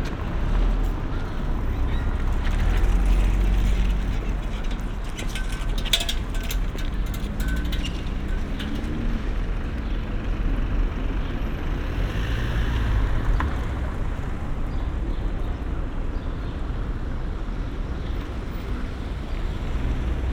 Berlin: Vermessungspunkt Friedel- / Pflügerstraße - Klangvermessung Kreuzkölln ::: 05.07.2013 ::: 18:27
Berlin, Germany